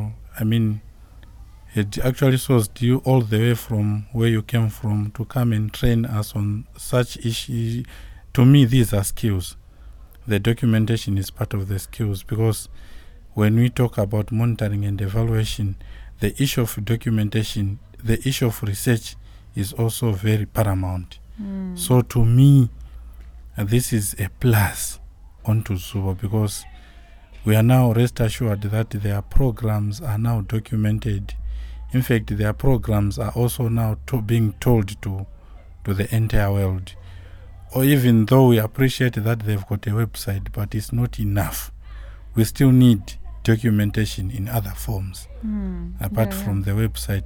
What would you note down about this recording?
...part of an interview with Anthony Ncube from the Ministry of Women Affairs in Binga. Zubo Trust invited also its local partners and stakeholders to our workshop. Antony participated actively in the training. We recorded this interview during one of the one-to-one training sessions. I asked Antony about the joint work of the Ministry and Zubo Trust and, based on this, of his experience now during the workshop... he beautifully emphasises on the possibilities of listening to the inside ('monitoring') and speaking to the outside, representing Binga and the Tonga people... a recording made during the one-to-one training sessions of a workshop on documentation skills convened by Zubo Trust; Zubo Trust is a women’s organization bringing women together for self-empowerment.